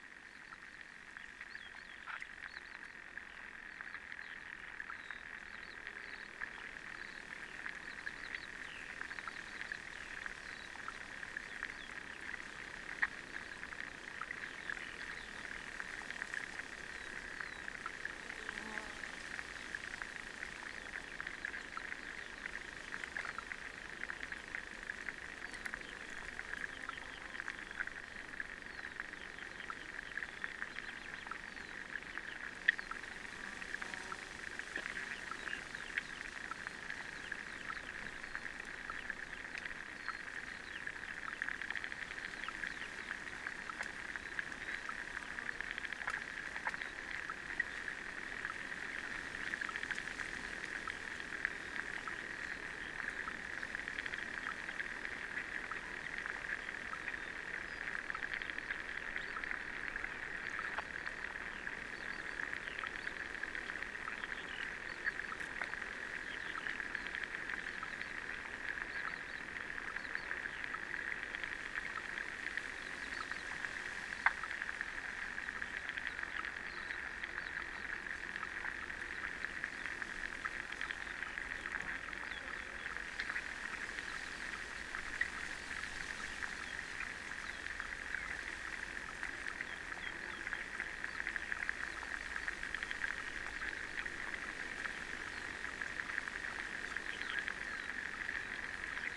4 channel recording of little pond: hydrophones and small omni